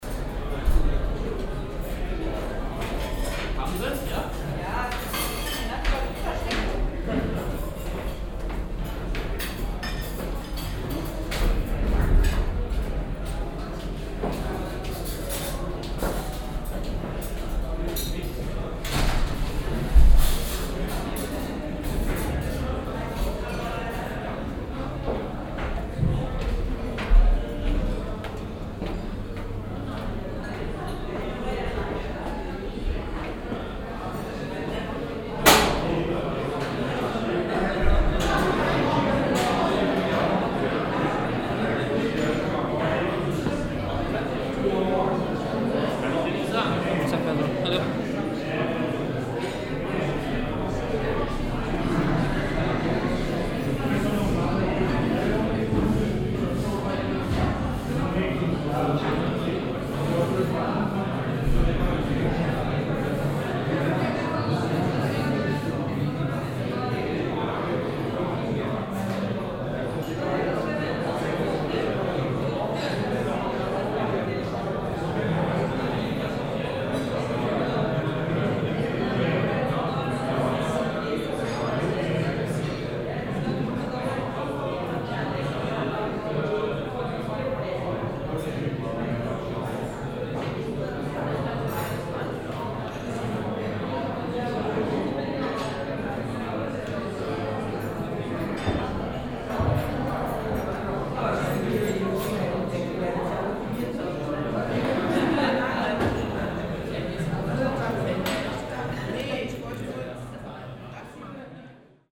essen, gelänge zeche zollverein, kokerei, cafe - essen, gelände zeche zollverein, kokerei, cafe
Mittagsbetrieb in einem Cafe-Restaurant innerhalb der ehemaligen Kokerei auf dem Gelände des Weltkulturerbes Zeche Zollverein
Projekt - Stadtklang//: Hörorte - topographic field recordings and social ambiences